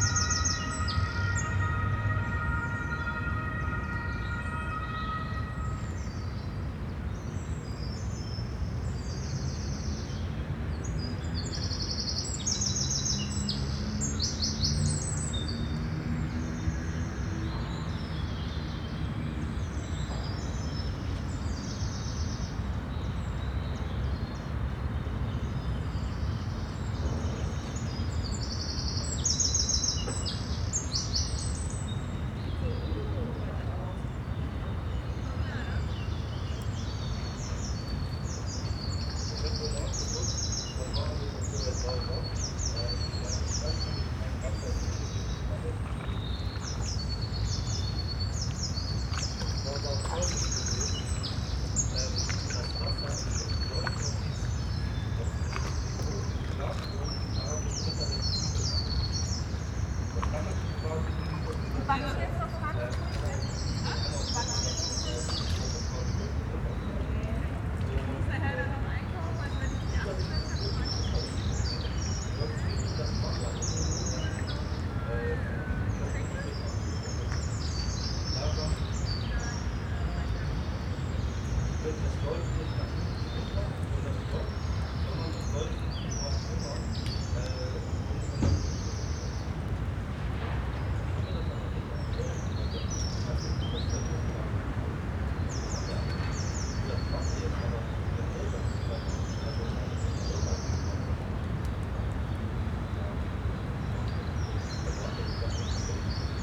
Isebek-Kanal, Kaiser-Friedrich-Ufer, Hamburg, Deutschland - canal ambience
Isebek-Grünzug, green stripe along Isebek canal, late morning in spring, ambience /w birds, siren, someone making a phone call, remote traffic, two paddlers, pedestrians
(Sony PCM D50, Primo EM172)
22 April 2022, 11:20